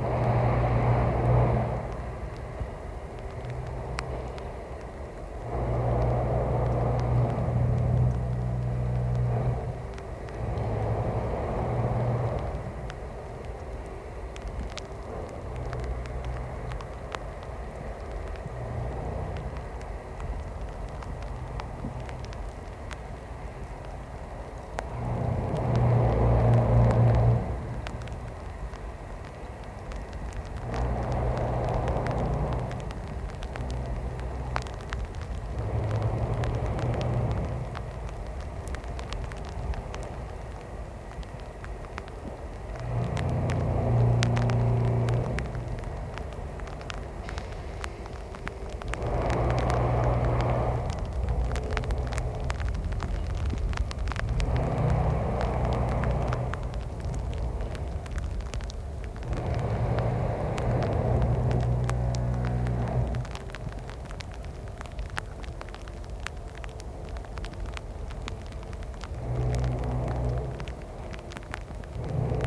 Polerady, Czech Republic - Insistent sound from the Best factory with rain
Recorded on a very dark wet October evening. The rain is hitting my coat, which leaks. Best make stone, tiles and concrete architectural products.
20 October